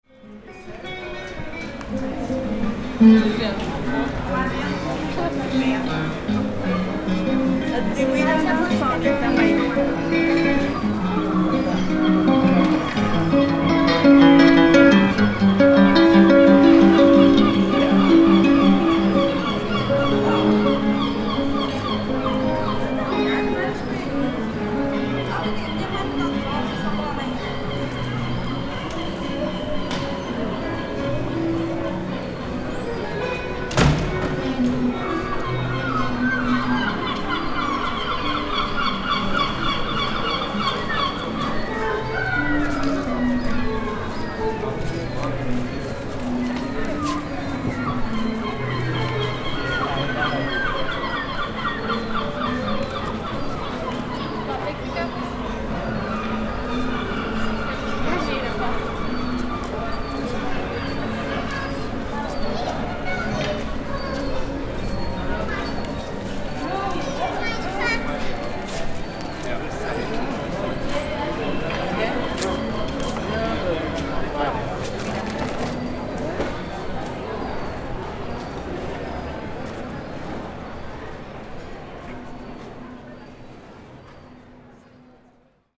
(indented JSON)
{
  "title": "Porto, Portugal - Guitarist in the street",
  "date": "2016-08-22 17:19:00",
  "description": "Man playing guitar in the street, Porto, Portugal, Zoom H6",
  "latitude": "41.14",
  "longitude": "-8.62",
  "altitude": "42",
  "timezone": "Europe/Lisbon"
}